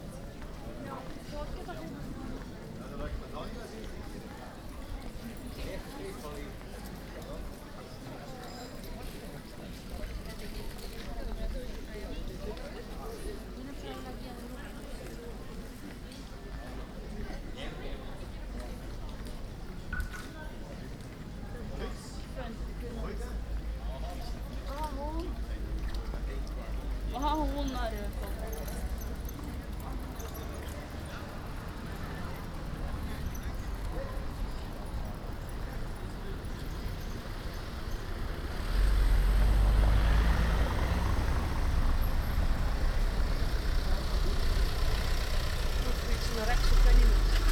Brugge, België - Short stroll through Bruges.
Short stroll through the touristic centre of Brugge.
Zoom H2 with Sound Professionals SP-TFB-2 binaural microphones.